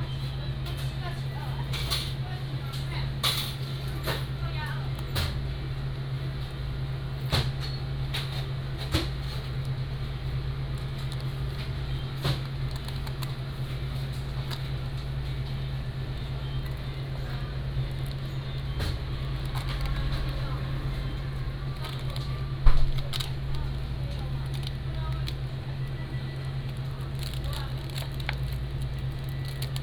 赤崁村 Baisha Township - In convenience stores
In convenience stores